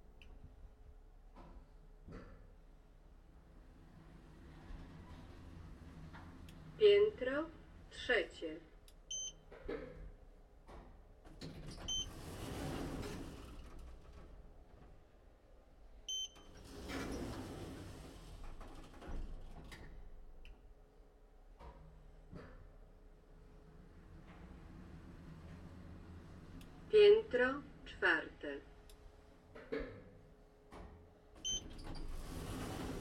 Tuwima, Łódź, Polska - passenger lift @ Tuwima 40
passenger lif @ Tuwima 40